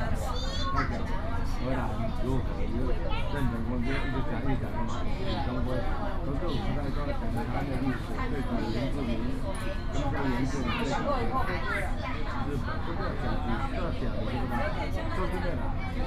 Tamsui Line, Taipei City - in the MRT station car
in the MRT station car, Originally very people chatting inside, But passengers quarrel disputes, Instantly became very quiet inside, And then reply noisy cars
Taipei City, Taiwan